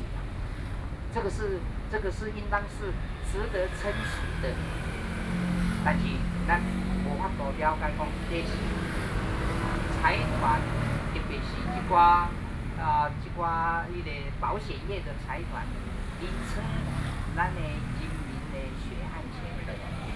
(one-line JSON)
{"title": "Legislative Yuan, Taiwan - Speech", "date": "2013-05-26 21:12:00", "description": "Sitting in front of the Legislative Yuan and protesters Civic Forum, Sony PCM D50 + Soundman OKM II", "latitude": "25.04", "longitude": "121.52", "altitude": "11", "timezone": "Asia/Taipei"}